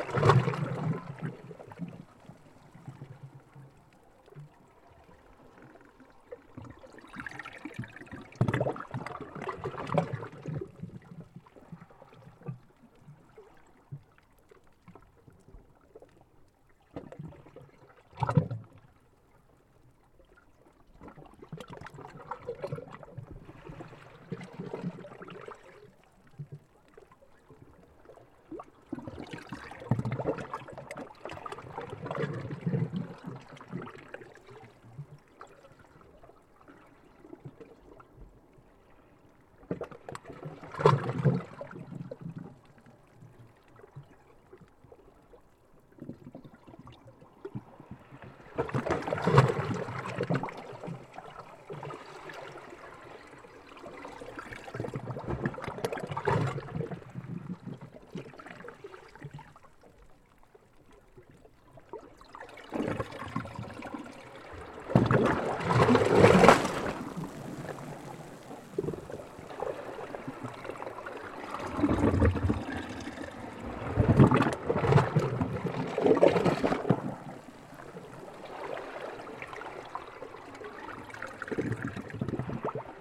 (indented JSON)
{
  "title": "Coz-pors, Trégastel, France - Burping Water in a crack of a rock [Coz-pors]",
  "date": "2019-04-01",
  "description": "L'eau s'écoule dans une petite fissure le long du rocher.\nThe water flows in a small crack along the rock.\nApril 2019.\n/Zoom h5 internal xy mic",
  "latitude": "48.84",
  "longitude": "-3.52",
  "timezone": "Europe/Paris"
}